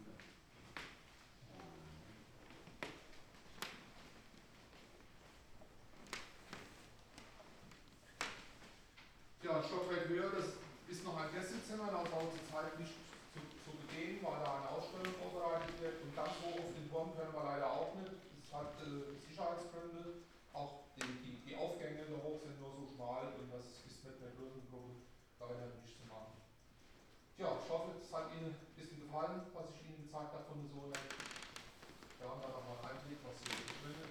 niederheimbach: burg sooneck - sooneck castle tour 4
guided tour through sooneck castle (4), visitors gliding on overshoes to the next room, guide continues the tour
the city, the country & me: october 17, 2010